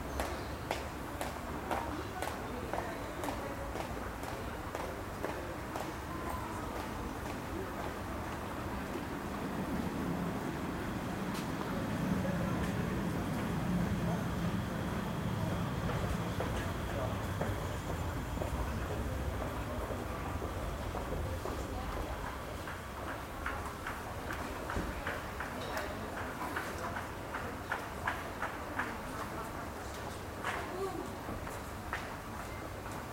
Cologne, Friesenplatz, tram entrance hall - Köln, Friesenplatz, subway entrance hall
Recorded july 4th, 2008.
project: "hasenbrot - a private sound diary"
6 July 2008, ~16:00